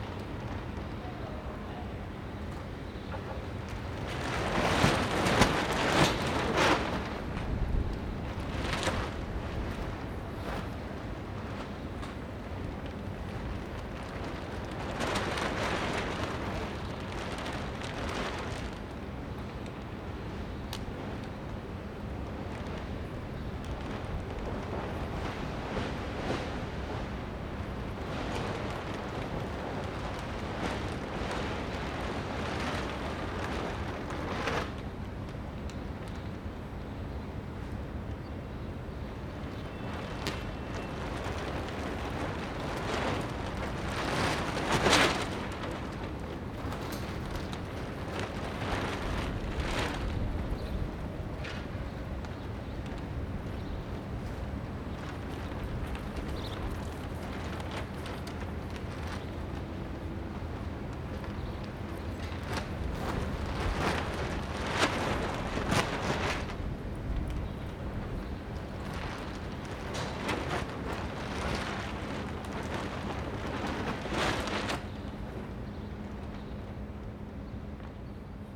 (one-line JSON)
{"title": "Akademie der Wissenschaften, Mitte, Berlin, Deutschland - Rooftop of Akademie der Wissenschaften, Berlin - tarp flapping in the wind", "date": "2012-04-27 15:35:00", "description": "Rooftop of Akademie der Wissenschaften, Berlin - tarp flapping in the wind. [I used the Hi-MD-recorder Sony MZ-NH900 with external microphone Beyerdynamic MCE 82]", "latitude": "52.51", "longitude": "13.39", "altitude": "43", "timezone": "Europe/Berlin"}